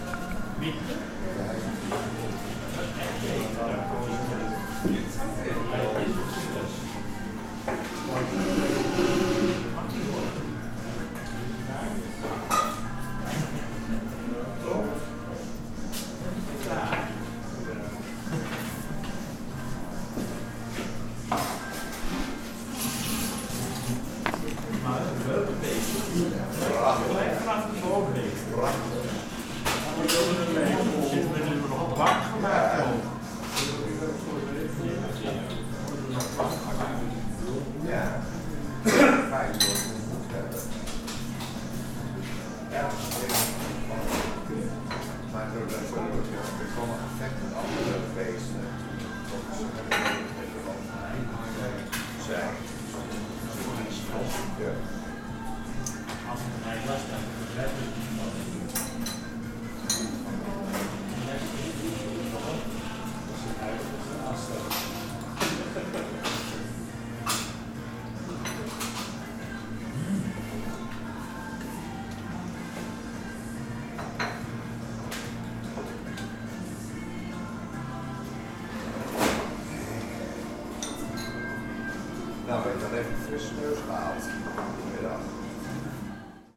Flugplatz Helgoland (HGL), Düne, Helgoland, Deutschland - flughafenrestaurant helgoland
flughafenrestaurant helgoland, düne 13, 27498 helgoland